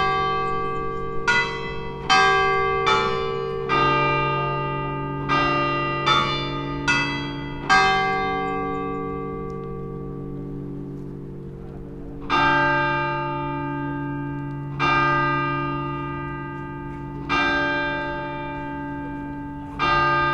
Stairs to the Cathedral (atmo) with bells at the end of the recording.
ORTF recording with Sony D100
sound posted by Katarzyna Trzeciak